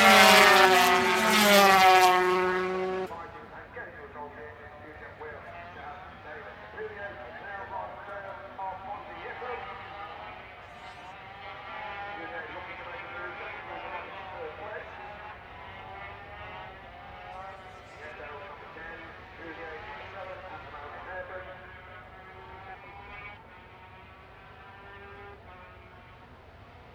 Unnamed Road, Derby, UK - British Motorcycle Grand Prix 2004 ... 250 race ...
British Motorcycle Grand Prix 2004 ... 250 race ... one point stereo mic to mini-disk ... commentary ...